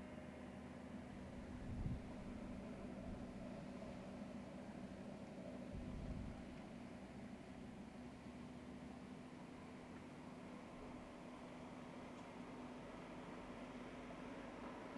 the siege bell war memorial, valetta, malta.